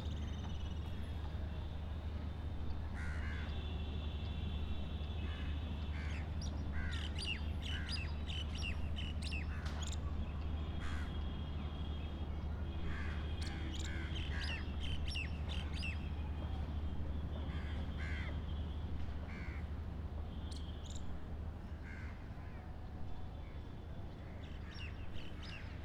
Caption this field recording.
General city ambiance recorded from the flat roof of the very interesting old mosque in Delhi.